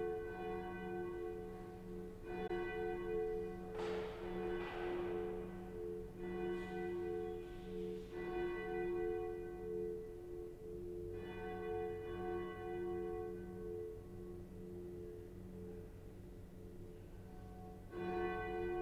P.za Vescovado, Caorle VE, Italia - Bells of the Cathedral of Caorle
Suono delle campane del Duomo di Caorle (Venezia, Italy)
Veneto, Italia, March 2022